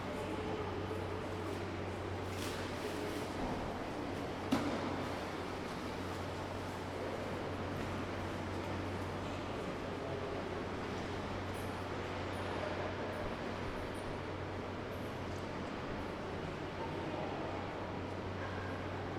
frankfurt, entrance to kunsthalle
reverberation, noise to silence